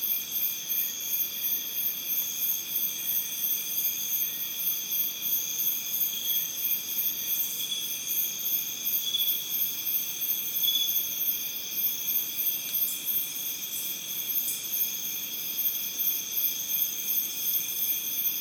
Iracambi - dusk
recorded at Iracambi, a NGO dedicated to preserve and grow the Atlantic Forest